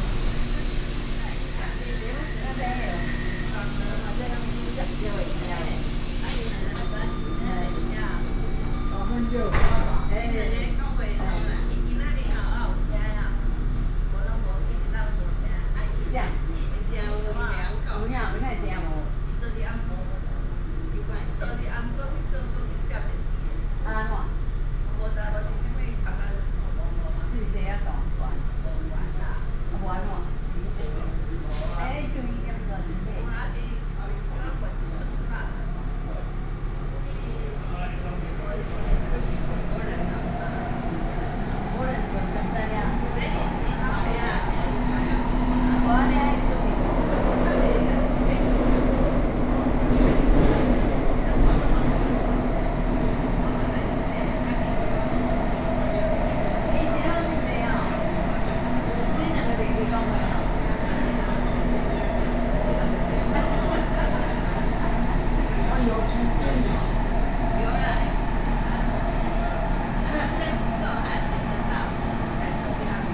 Xindian Line (Taipei Metro), New Taipei City - Xindian Line
from Dapinglin to Xindian District Office, Zoom H4n+ Soundman OKM II